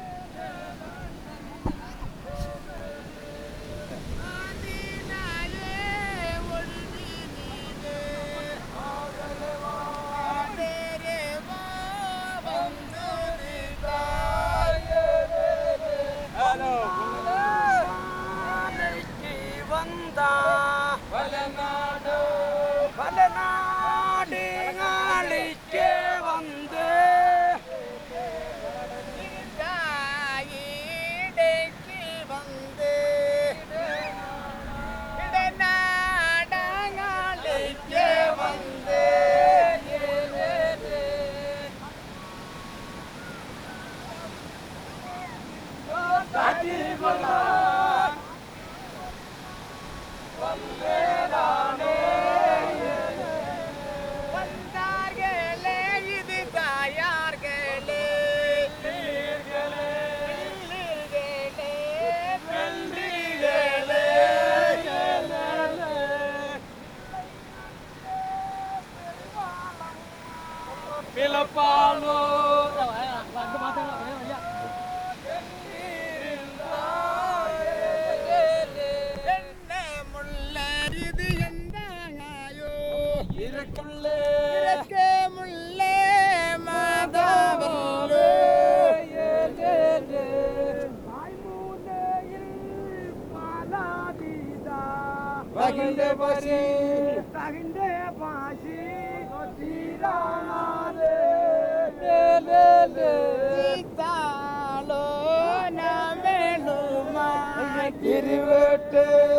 Light House Beach Rd, Kovalam, Kerala, India - fishermen pulling net ashore
fishermen pulling their catch ashore while singing to sustain the hard labour